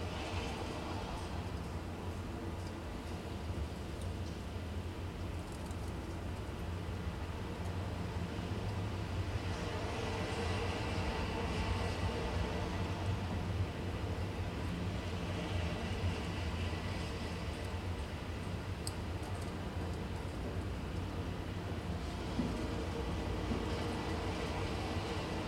Kopli, Tallinn, Estonia - First snowflakes
I managed to capture first snowflakes hitting the metal roof. I accidentally noticed, it was snowing outside, so decided to try recording the snowflakes. I used Tascam DR-40 portable recorder (Capsules were set to X-Y stereo configuration) and cleaned my recording with Audacity native noise reduction plugin. Recording was done from my 4th floor window.